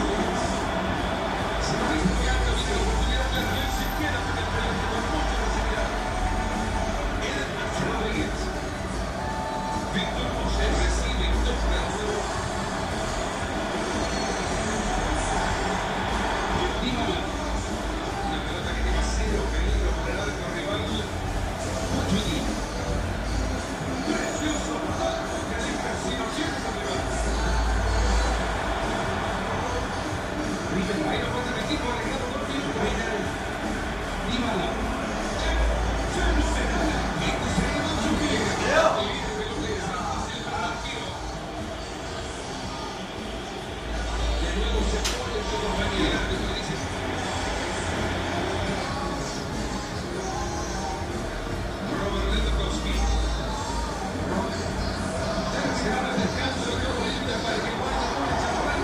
Cra., Villavicencio, Meta, Colombia - Local de entretenimiento WEBOX
Sonido ambiente de local de entretenimiento basado en consolas de vídeo juegos, llamado WEBOX que abre de diez de la mañana a diez de la noche en la sexta etapa de la esperanza.
14 November 2017, ~17:00